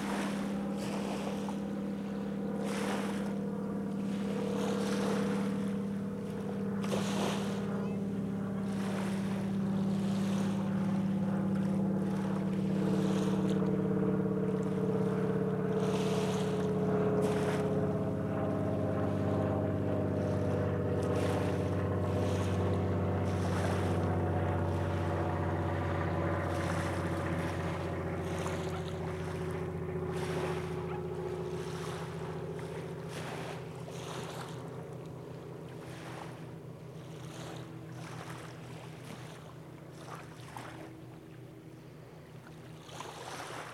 Carkeek Park
Tiny wavelets brush the shore as the sun sets on a calm December day at this waterfront park.
Major elements:
* Wavelets
* Mallards and seagulls
* Beachcombers
* Seaplanes
* Alas, no Burlington-Northern train (which runs along the waterfront)